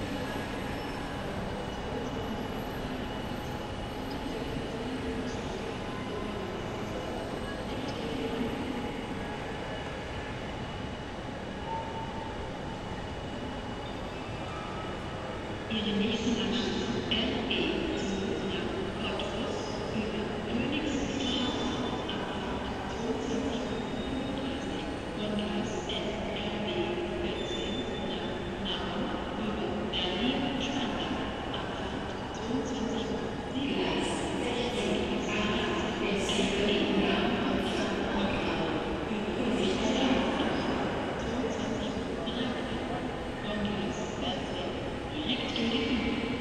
Hauptbahnhof Berlin - station walking, strolling around
Berlin Hauptbahnhof, main station, Tuesday later evening, strolling around through all layers, listening to trains of all sort, engines, people, squeaky escalators and atmospheres.
(SD702, Audio Technica BP4025)
22 February 2022, ~22:00